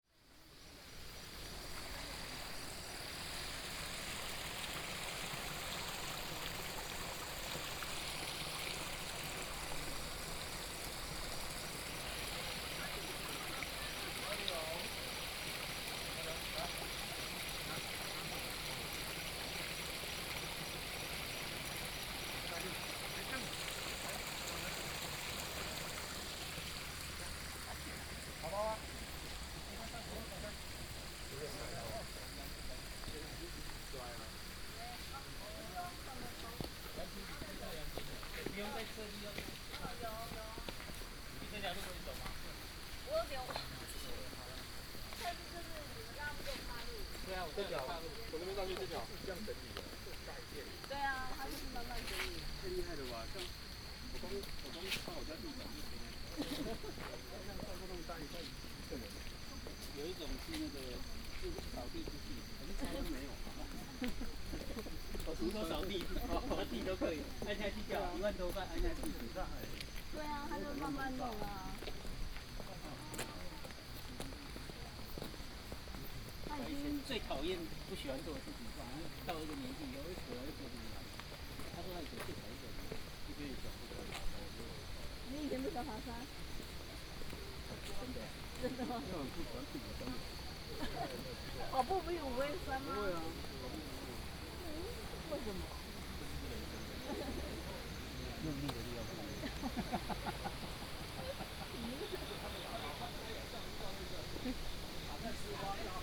{"title": "雞母嶺街, 貢寮區新北市 - House in the mountains", "date": "2018-11-05 17:19:00", "description": "Stream sound, House in the mountains\nSonu PCM D100 XY", "latitude": "25.07", "longitude": "121.90", "altitude": "125", "timezone": "GMT+1"}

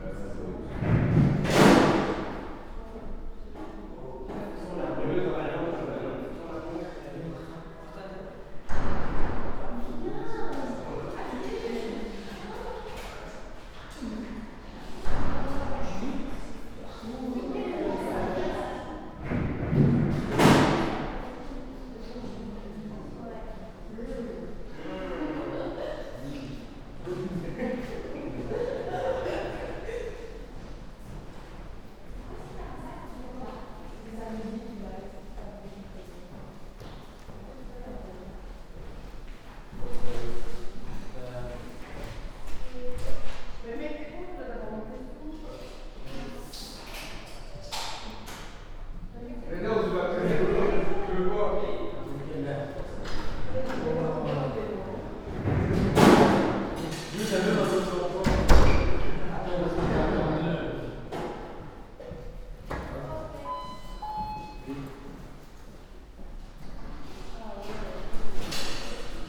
{"title": "La Barraque, Ottignies-Louvain-la-Neuve, Belgique - Mercator corridors", "date": "2016-03-18 11:00:00", "description": "In the Mercator corridors, students are joking and buying cans. There's a huge reverb.", "latitude": "50.67", "longitude": "4.62", "altitude": "134", "timezone": "Europe/Brussels"}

